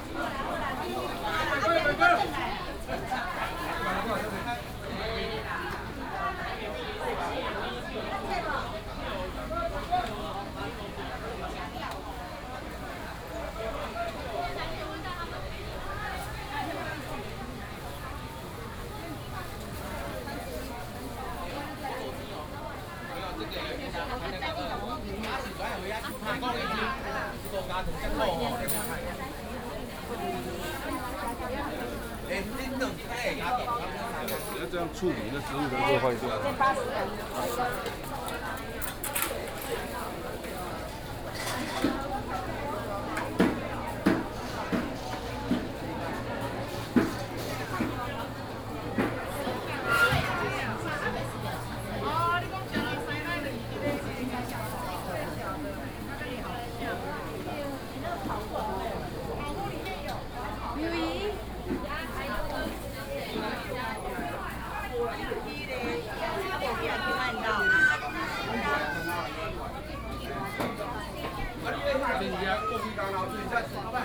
清水市場, New Taipei City - Walking through the traditional market
Walking through the traditional market, Very narrow alley